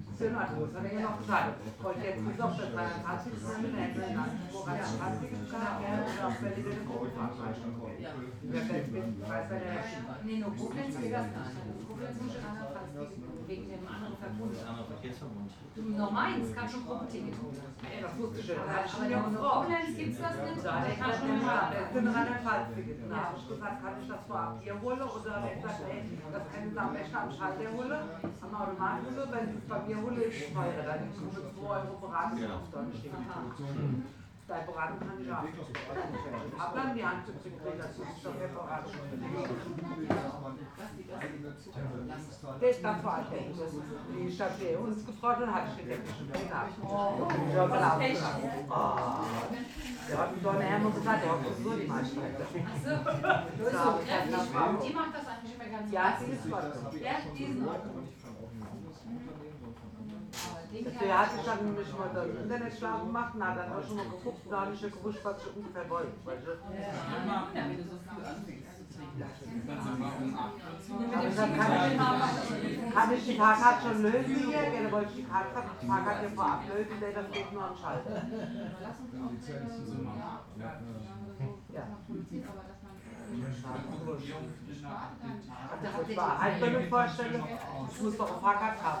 niederheimbach: burg sooneck, restaurant - the city, the country & me: pub of sooneck castle
guests talking with the manager of the pub about train connections
the city, the country & me: october 17, 2010